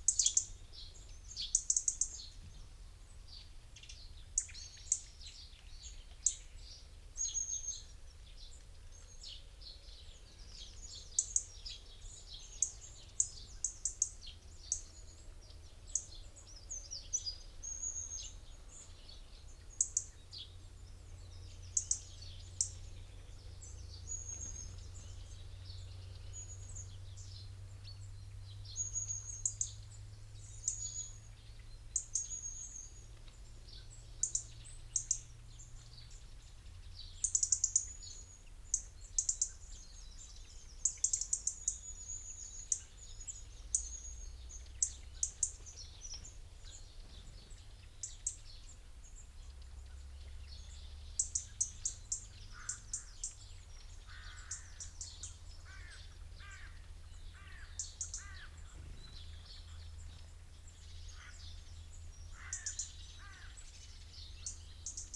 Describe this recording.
Soundscape ... the only constant being pheasant calls ... bird calls from ... carrion crow ... blackbird ... dunnock ... robin ... long-tailed tit ... great tit ... wood pigeon ... great tit ... treecreeper ... goldfinch ... binaural dummy head on tripod ... background noise ... traffic ...